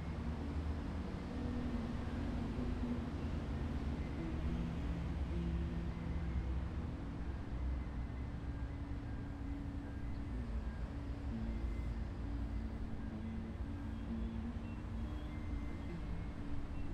camions pathak flûte
a-l.s, r.g, e.v roms